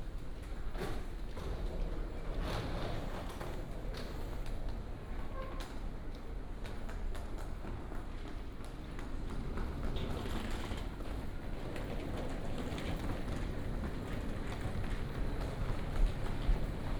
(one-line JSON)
{"title": "Taipei Station, Taipei City - Walk into the station", "date": "2017-03-18 07:10:00", "description": "Walk into the station, Walking on the ground floor", "latitude": "25.05", "longitude": "121.52", "altitude": "4", "timezone": "Asia/Taipei"}